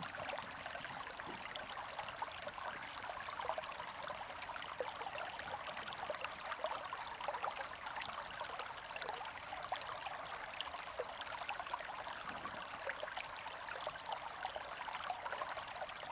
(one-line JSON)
{"title": "乾溪, 埔里鎮成功里, Nantou County - Small streams", "date": "2016-04-26 12:56:00", "description": "Sound of water, Small streams\nZoom H2n MS+XY", "latitude": "23.97", "longitude": "120.90", "altitude": "484", "timezone": "Asia/Taipei"}